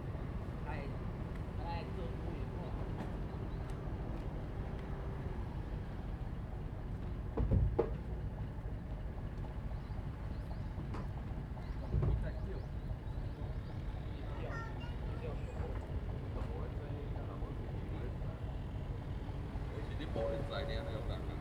南寮漁港, Lüdao Township - In the dock
In the dock
Zoom H2n MS +XY
Lyudao Township, Taitung County, Taiwan, 31 October 2014